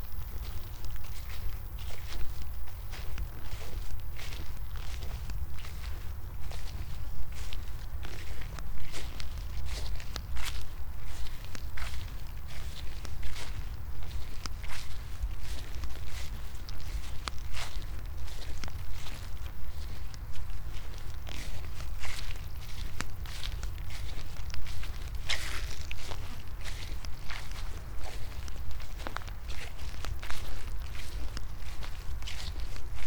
path of seasons, Piramida, Maribor, Slovenia - summer morning
mown meadow, quiet crickets, walk